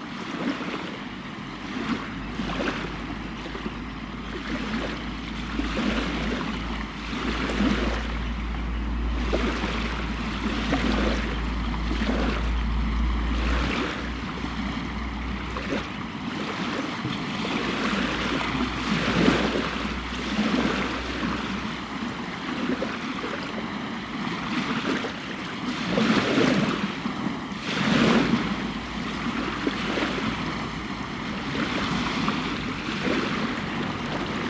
Rostrevor, Northern Ireland - Tide Going Out
Recorded with a pair of DPA 4060s and a Marantz PMD661